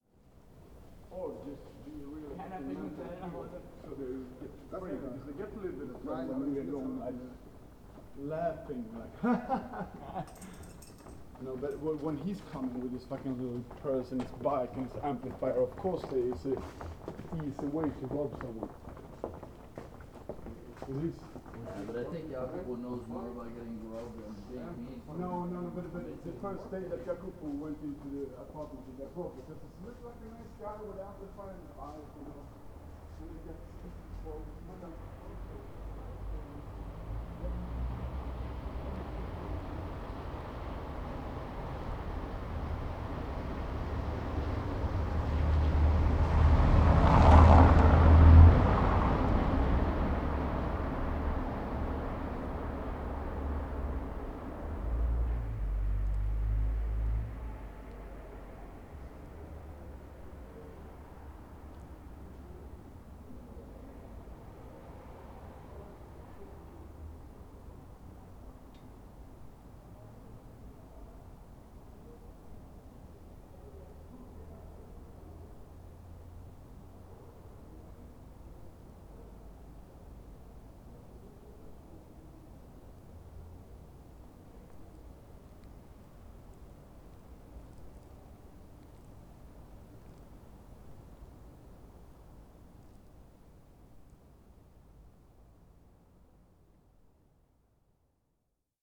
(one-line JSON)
{"title": "berlin: friedelstraße - the city, the country & me: late night passers by", "date": "2011-11-03 02:42:00", "description": "the city, the country & me: november 3, 2011", "latitude": "52.49", "longitude": "13.43", "altitude": "46", "timezone": "Europe/Berlin"}